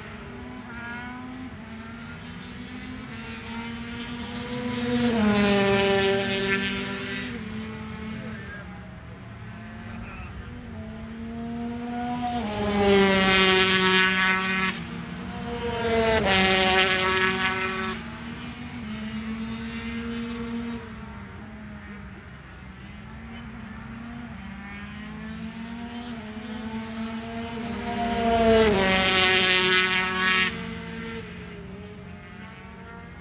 Unnamed Road, Derby, UK - British Motorcycle Grand Prix 2004 ... 125 free practice ... contd ...
British Motorcycle Grand Prix 2004 ... 125 free practice ... contd ... one point stereo mic to minidisk ... date correct ... time optional ...